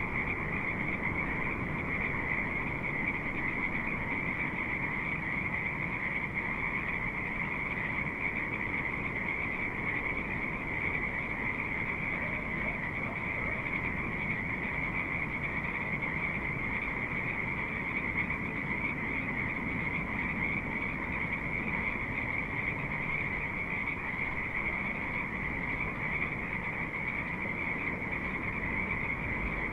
Quiet night with frogs and sea, Headlands CA
a still calm evening provided good recording conditions to hear the spring sounds in the valley